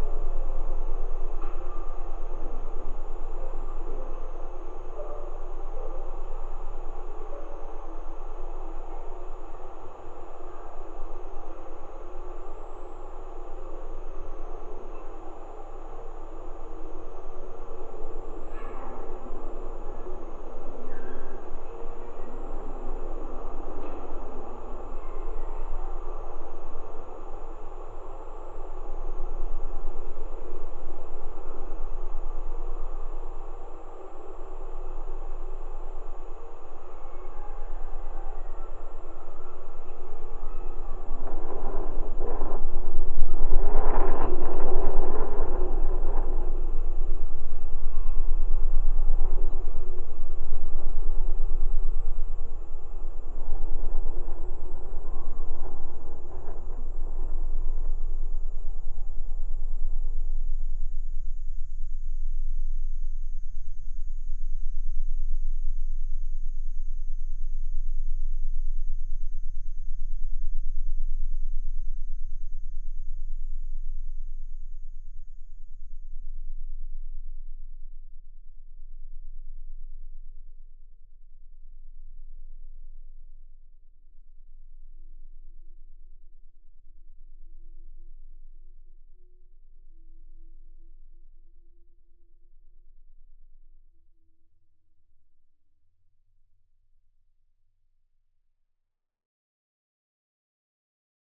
Antalgė, Lithuania, sculpture Travelling Pot Making Machine
Open air sculpture park in Antalge village. There is a large exposition of metal sculptures and instaliations. Now you can visit and listen art. Multichannel recording using geophone, contact mics, elecytomagnetic antenna Ether.
Utenos rajono savivaldybė, Utenos apskritis, Lietuva, 24 July, 16:30